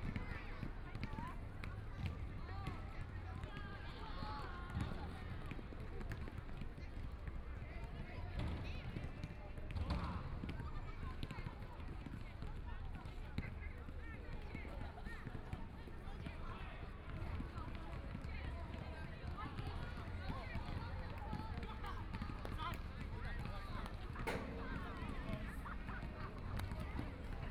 Holiday, A lot of people are doing sports, Kids game sounds, Sunny mild weather, Environmental noise generated by distant airport, Binaural recordings, Zoom H4n+ Soundman OKM II